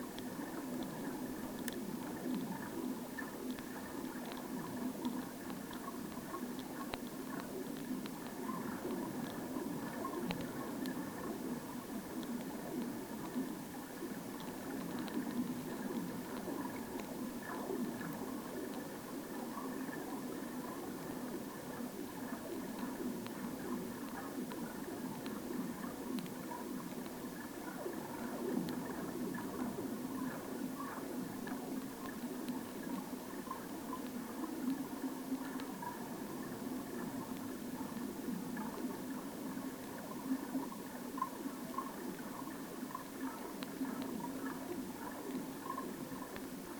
Pušyno g., Utena, Lithuania - Heartbeat of Nature LAKE ICE
Heartbeat of Nature LAKE ICE